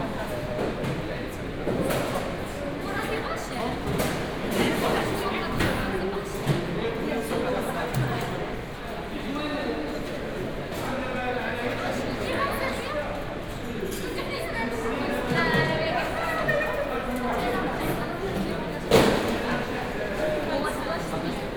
Airport Marrakesch-Menara - security zone
security zone, before the checks
(Sony D50, OKM2)
1 March 2014, 10:55